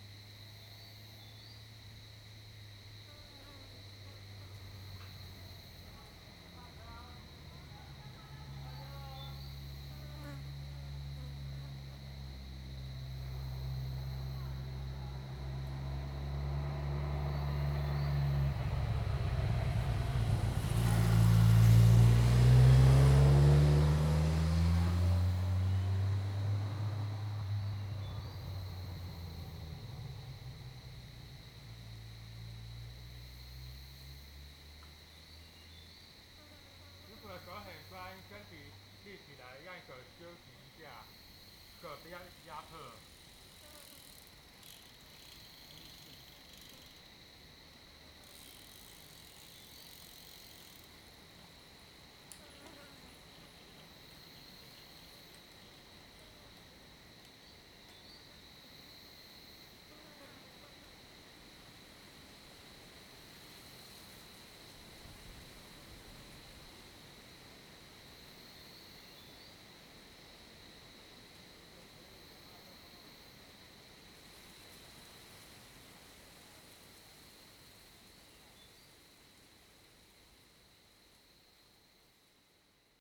Xuhai Rd., Mudan Township, 屏東縣 - Mountain corners
Mountain corners, Mountain corners, Cicadidae sound, Fly sound, Bird song, Bicycle team, squirrel, traffic sound, wind
Zoom H2n MS+XY
2 April 2018, 12:08